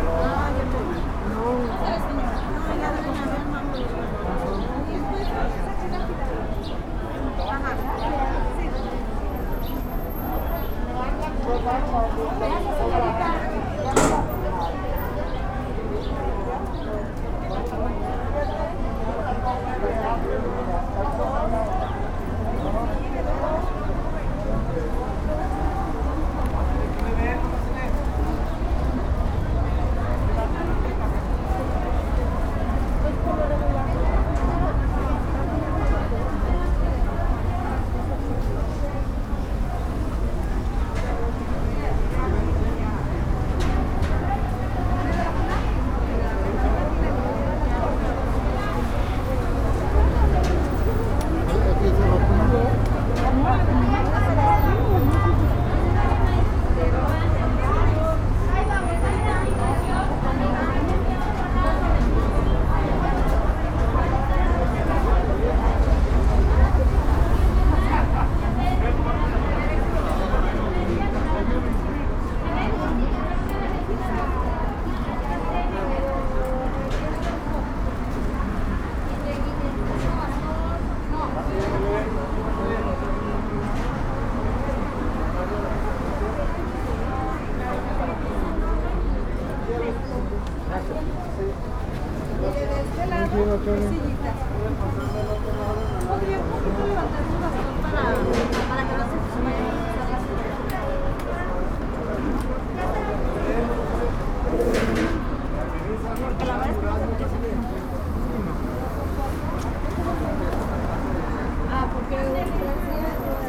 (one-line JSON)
{"title": "IMSS, Blvd A. López Mateos, Obregon, León, Gto., Mexico - Fila para aplicación de la segunda dosis de vacuna contra COVID-19 para adultos mayores de 60 años en el Seguro Social IMSS T1.", "date": "2021-05-31 12:13:00", "description": "The line to get the second dose vaccine to COVID-19 for people over 60 years old at Social Security IMSS T1.\nI made this recording on May 31st, 2021, at 12:13 p.m.\nI used a Tascam DR-05X with its built-in microphones and a Tascam WS-11 windshield.\nOriginal Recording:\nType: Stereo\nEsta grabación la hice el 31 de mayo de 2021 a las 12:13 horas.", "latitude": "21.14", "longitude": "-101.69", "altitude": "1816", "timezone": "America/Mexico_City"}